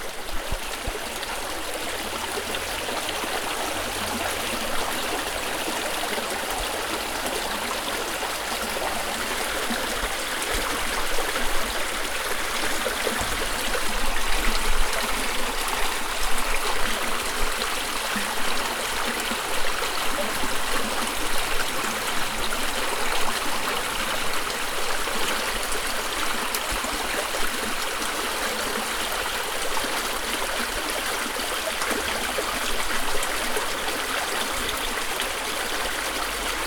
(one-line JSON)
{"title": "Chemin des Rivières, Nasbinals, Frankrijk - Nasbinals River", "date": "2015-10-10 15:17:00", "description": "A very cold river recording. (Recorded with ZOOM 4HN)", "latitude": "44.66", "longitude": "3.05", "altitude": "1176", "timezone": "Europe/Paris"}